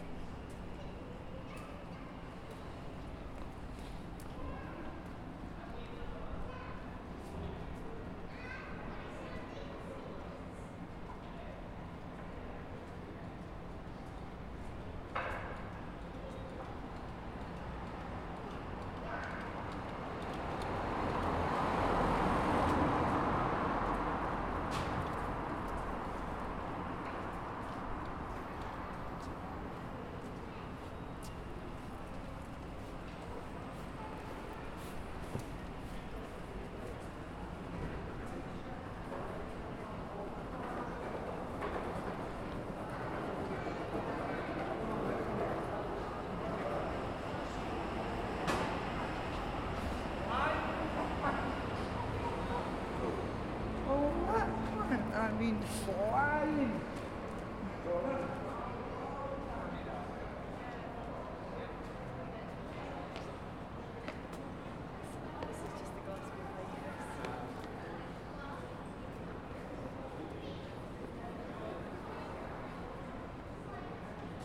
Ambient soundscape from underneath the 'Hielanman's Umbrella' on Monday 2nd May 2021 including traffic noise, footsteps/other sounds from pedestrians, and transport oriented public address notices from Glasgow Central train station. Recorded in stereo using a Tascam DR-40x.